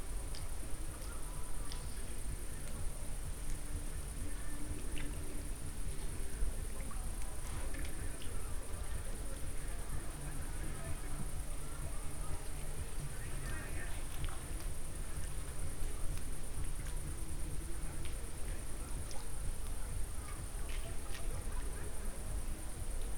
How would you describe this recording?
sounds of jumping fish, a distant party, people talking, church bells, (Sony PCM D50, Primo EM172)